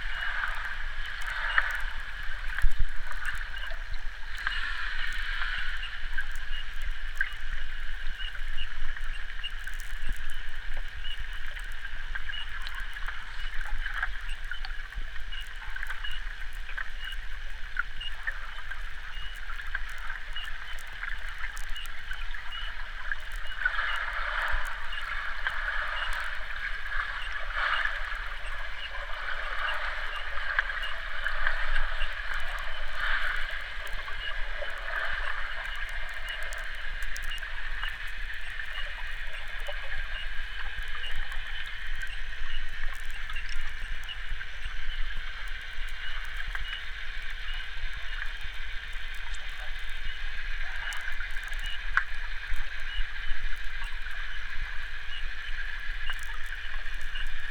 two hydrophones underwater and electromagnetic antenna Priezor in the air
Lithuania